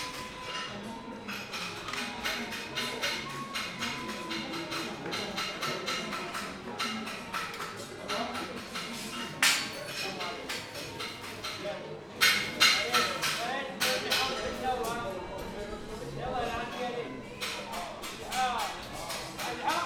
Derb Anbou, Marrakech, Marokko - metal workers
Lot of small workshops where they work with metal. Recorded with Sony PCM D-100 with built-in microphones
Marrakech, Morocco, 28 November 2018